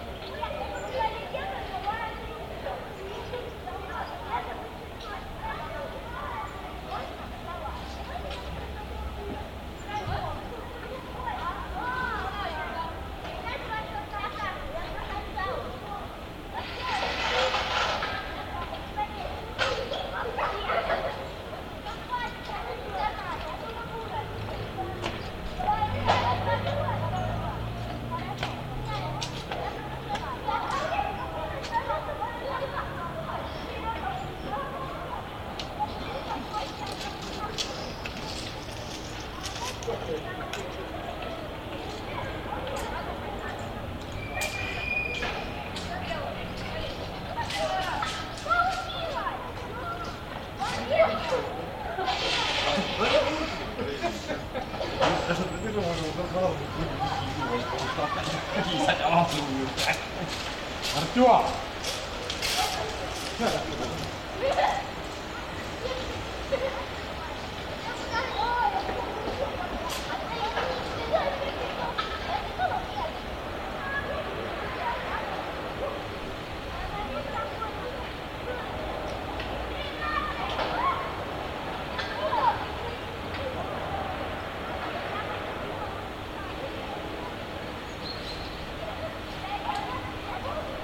вулиця Незалежності, Костянтинівка, Донецька область, Украина - Детские игры и прохожие
Шум осенней улицы в провинциальном городе
Звук:
Zoom H2n